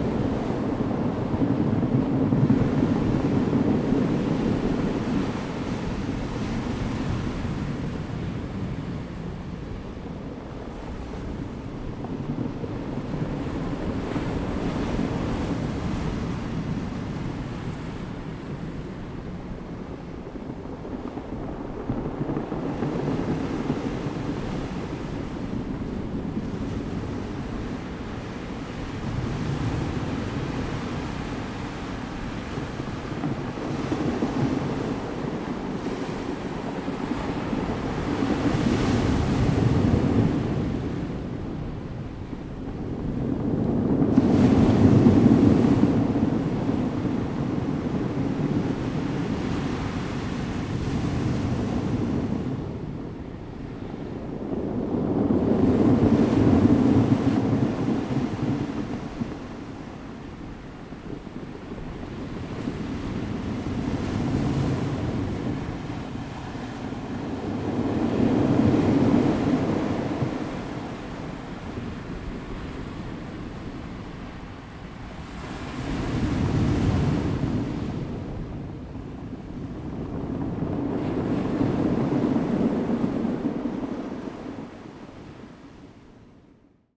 達仁溪橋, Nantian, Daren Township - Rolling stones
At the beach, Sound of the waves, Near the waves
Zoom H2n MS+XY